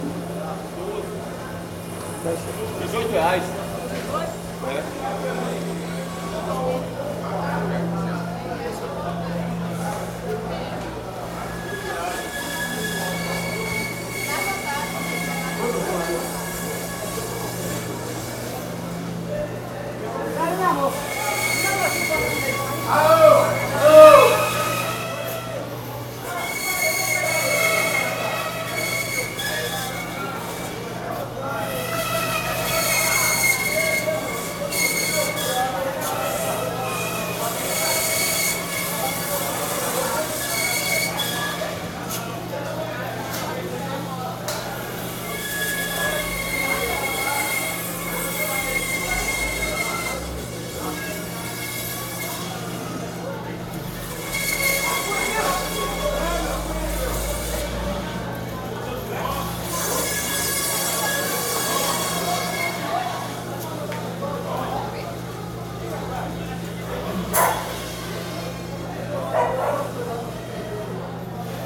Cachoeira - BA, 44300-000, Brasil - Mercado Municipal - Municipal Market
Máquina cortando pé de boi.
Machine cutting ox foot.
27 January 2018, 07:15